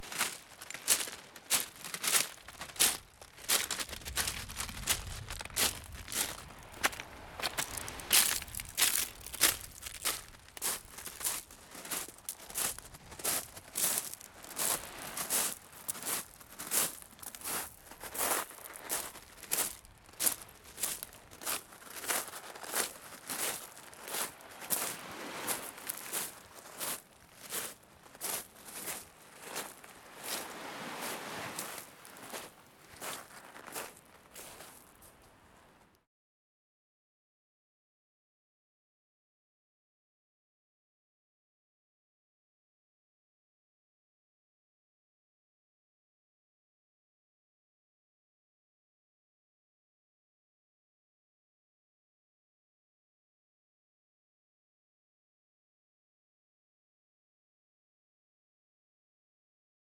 Tadoussac, QC, Canada - Walk on a pebble beach at Tadoussac
Walk on a pebble beach at Tadoussac
REC: Zoom H4N